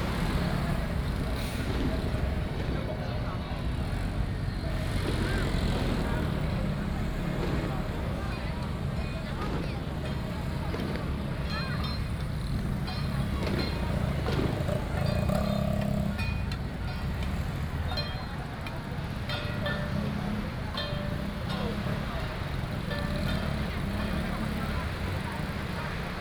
Traditional temple festivals, Firecrackers